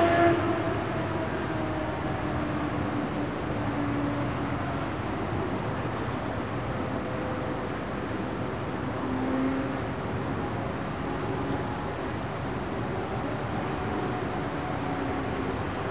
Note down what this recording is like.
alarm going on and off and echoing off the vast apartment buildings around. conversation and laughs of a juvenile group among the trees. a carpet of crickets on a field in front of me. not too much traffic, sounds spreads effectively and repeats with a nice short delay. summer night - at it's peak.